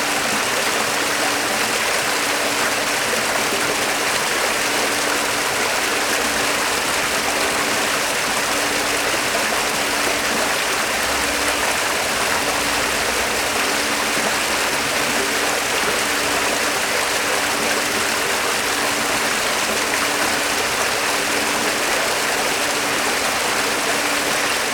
Orléans, fontaine Place d'Arc (bas gauche)
Fontaine en escalier à Place d'Arc, Orléans (45-France)
(bas gauche)